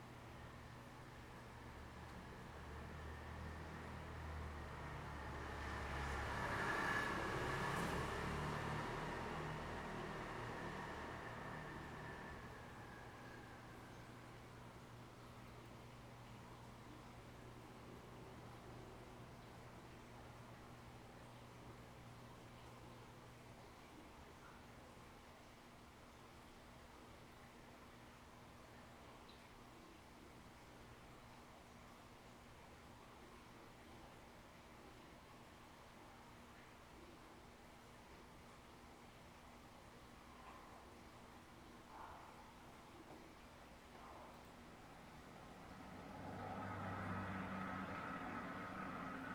Ln., Sec., Zhongyang N. Rd., Beitou Dist - Late at night
Late at night, Mew, Household washing machine next door sound, Traffic Sound, Zoom H6 M/S